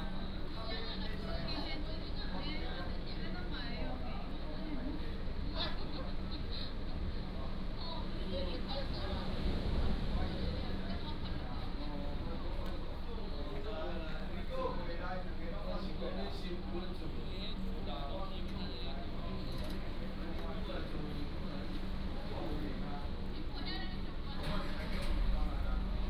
Beigan Township, Lienchiang County - In the bus station
In the bus station, Many tourists
馬祖列島 (Lienchiang), 福建省 (Fujian), Mainland - Taiwan Border